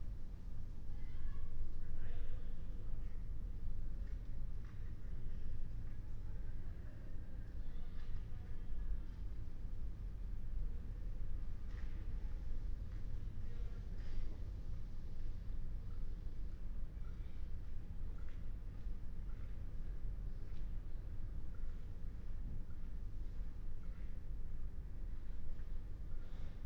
Berlin Bürknerstr., backyard window - Hinterhof / backyard ambience
19:36 Berlin Bürknerstr., backyard window
(remote microphone: AOM5024HDR | RasPi Zero /w IQAudio Zero | 4G modem
Berlin, Germany, 24 October 2021, 19:36